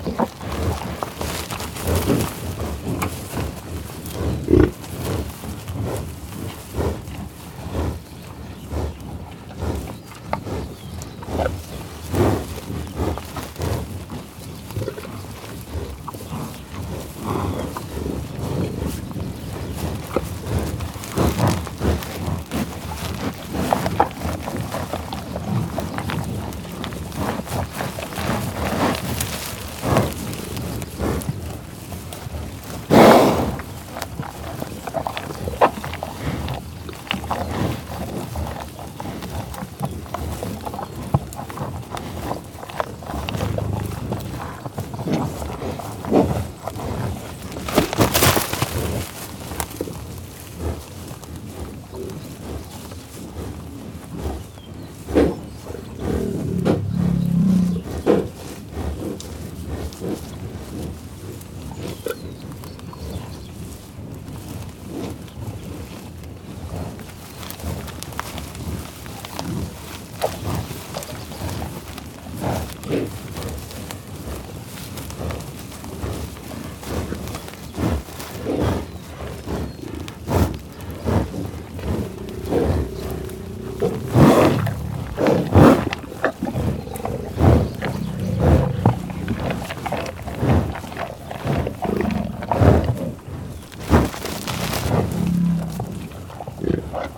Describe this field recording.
At the end of the day, the buffalos came to eat some food the worker of the park gave to them with his truck. The bisons came really close to us. Sound recorded by a MS setup Schoeps CCM41+CCM8, Sound Devices 788T recorder with CL8, MS is encoded in STEREO Left-Right, recorded in may 2013 in the Tallgrass Prairie Reserve close to Pawhuska, Oklahoma (USA).